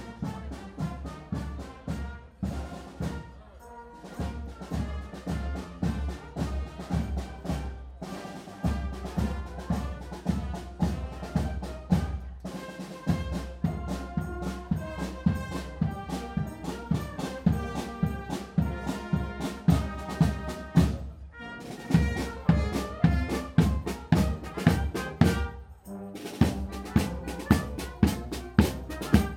During the annual feast in Court-St-Etienne, the fanfare paces in the street.